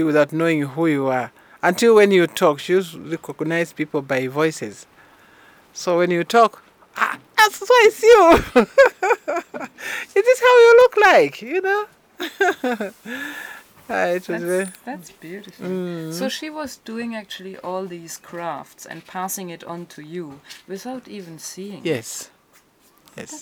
Harmony, Choma, Zambia - My Mum inspired me...
Esnart Mweemba is an artist and craftswomen, researcher and trainer from Choma Zambia and belongs to the BaTonga. We made these recordings in Esnart’s studio on her farm in Harmony (between Choma and Monze). So we had plenty of material and inspiration around us to go into detail in our conversation; and we did. Esnart shares her knowledge and experience with us, especially about traditional beadwork. She did extensive research in this field, which she gathered in interviews with elders... here she tells how she learnt her art from her mum who was blind...
14 November 2012